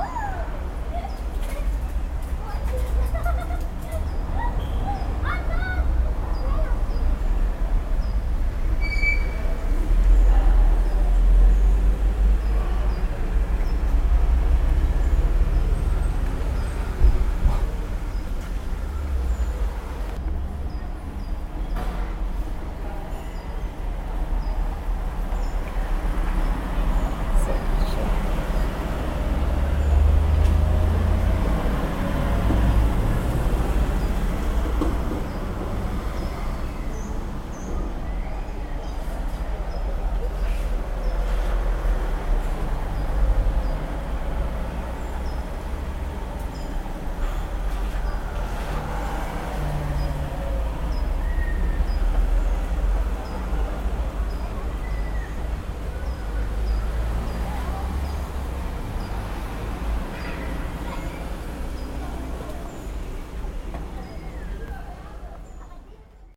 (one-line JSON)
{"title": "cologne, street café, traffic", "description": "café am roemerpark, sitting outside. traffic, laughter, café atmosphere inside/outside. recorded june 4, 2008. - project: \"hasenbrot - a private sound diary\"", "latitude": "50.92", "longitude": "6.96", "altitude": "54", "timezone": "GMT+1"}